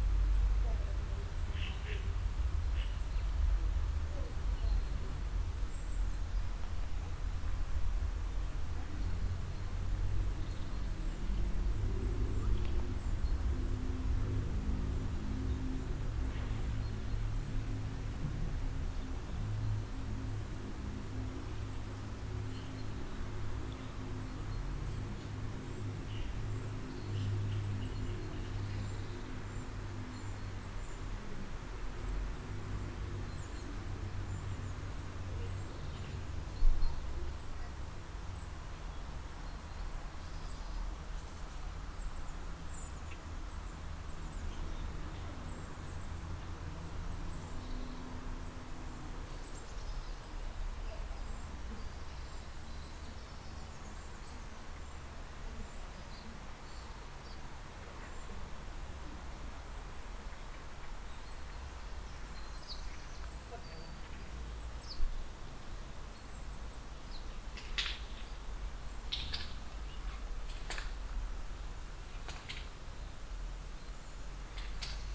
vianden, camping place, morning atmo
In the early morning at the camping place. Silent voices from behind the thin tent walls, a little wind, birds and the deep resonant bass of some traffic.
Vianden, Campingplatz, Morgenstimmung
Am frühen Morgen auf dem Campingplatz. Leise Stimmen hinter den Zeltwänden, ein kleiner Wind, Vögel und der tiefe Bass von etwas Verkehr.
Vianden, terrain de camping, ambiance matinale
Tôt le matin sur le terrain de camping. Des voix discrètes derrière la fine toile des tentes, un petit vent, des oiseaux et le bruit sourd du trafic routier.
Project - Klangraum Our - topographic field recordings, sound objects and social ambiences